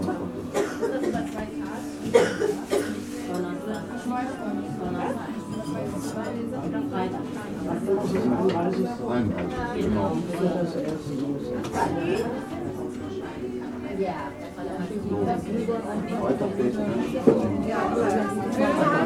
herne-unser fritz - die gutsstuben